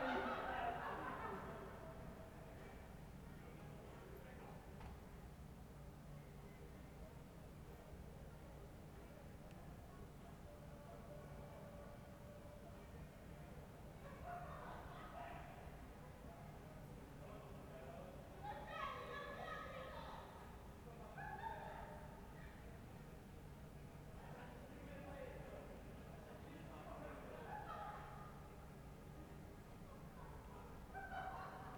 Ascolto il tuo cuore, città. I listen to your heart, city. Several chapters **SCROLL DOWN FOR ALL RECORDINGS** - Round midnight at spring equinox in the time of COVID19 Soundscape
"Round midnight at spring equinox in the time of COVID19" Soundscape
Chapter XVII of Ascolto il tuo cuore, città, I listen to your heart, city
Saturday March 21th - Sunday 22nd 2020. Fixed position on an internal terrace at San Salvario district Turin, eleven days after emergency disposition due to the epidemic of COVID19.
Start at 11:38 p.m. end at OO:37 a.m. duration of recording 59'17''.
Torino, Piemonte, Italia, March 2020